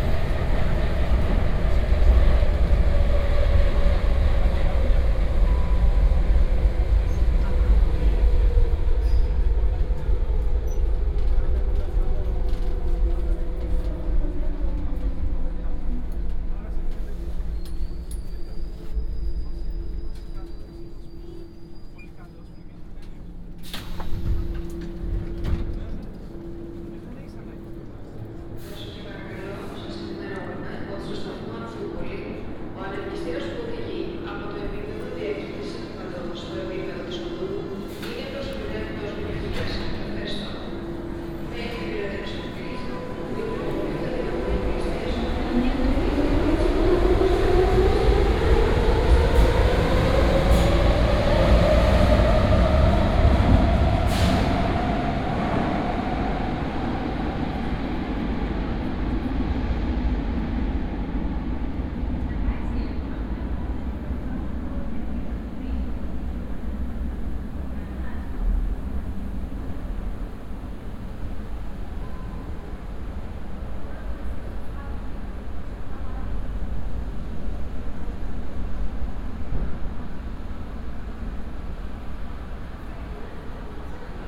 Binaural recording of ride from Neos-Kosmos to Acropoli with M2 line.
Recorded with Soundman OKM + Sony D100
Αποκεντρωμένη Διοίκηση Αττικής, Ελλάς, 9 March 2019, ~16:00